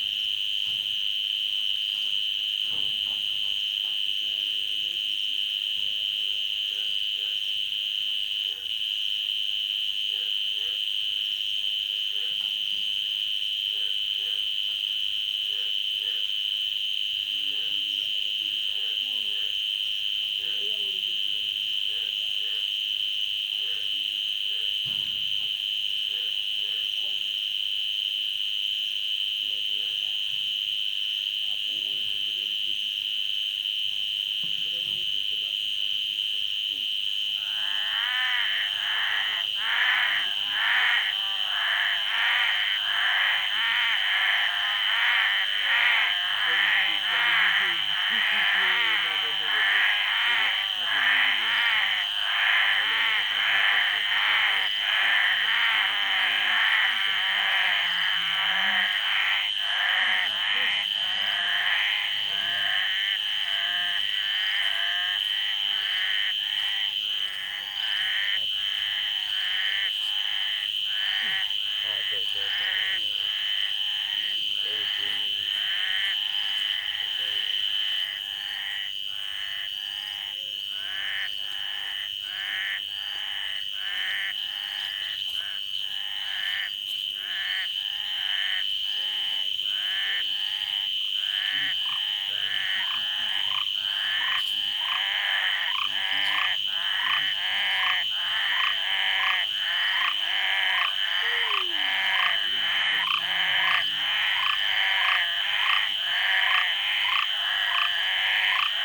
Koforidua, Ghana - Amphibia Bonya, Ghana.
Variations of amphibian acoustic phenomena documented in Ghana. Specific species will be identified and documented off and onsite. Acoustic Ecologists are invited to join in this research.
*This soundscape will keep memory of the place since biodiversity is rapidly diminishing due to human settlements.
Recording format: Binaural.
Date: 22.08.2021.
Time: Between 8 and 9pm.
Recording gear: Soundman OKM II with XLR Adapter into ZOOM F4.